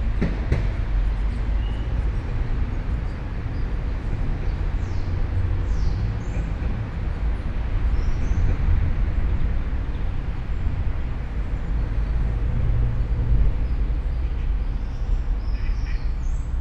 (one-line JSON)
{"title": "all the mornings of the ... - jun 6 2013 thursday 07:13", "date": "2013-06-06 07:13:00", "latitude": "46.56", "longitude": "15.65", "altitude": "285", "timezone": "Europe/Ljubljana"}